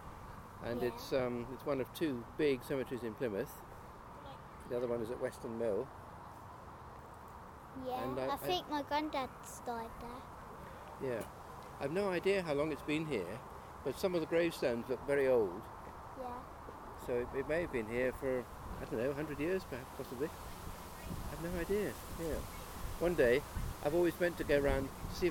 Efford Walk Two: About Effod cemetery - About Effod cemetery
UK, 24 September 2010, ~17:00